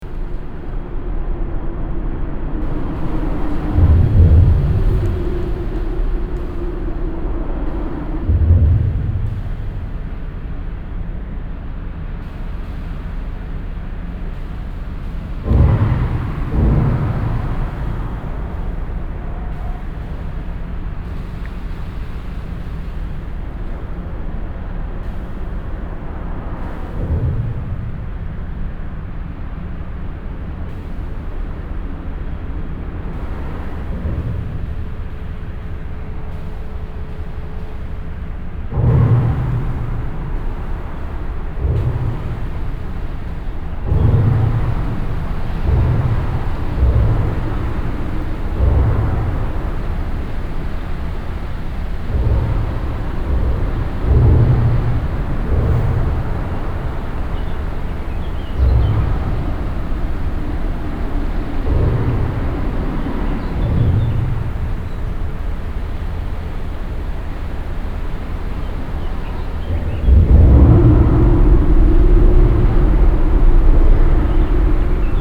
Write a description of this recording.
Underneath the Rhine bridge at Wesel. The sounds of cars crossing the bridge and resonating in the big metall architecture. Some birds chirping. First recorded without wind protection. soundmap d - social ambiences and topographic field recordings